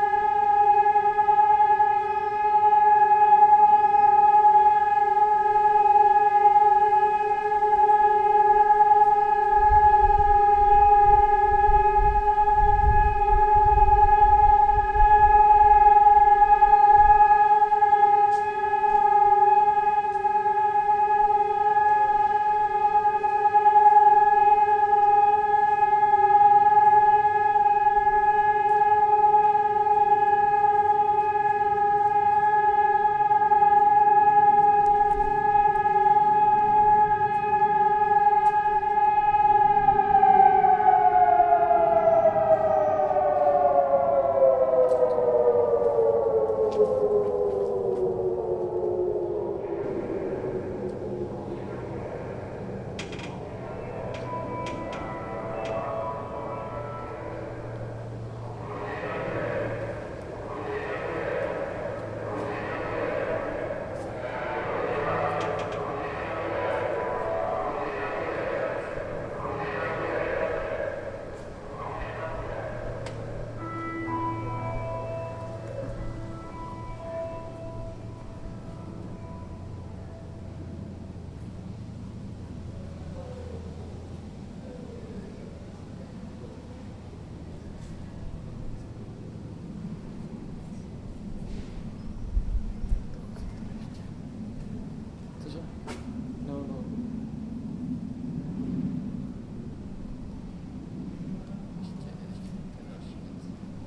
communication space skolska 28, air raid
air raid sounds every first wednesday in a month
1 June